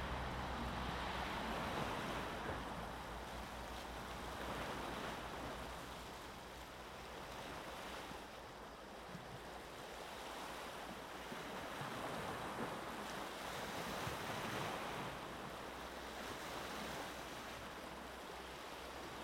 Sv.Juraj, Croatia - Waves Traffic
AKG C414-XLS Blumlein 1.4m array height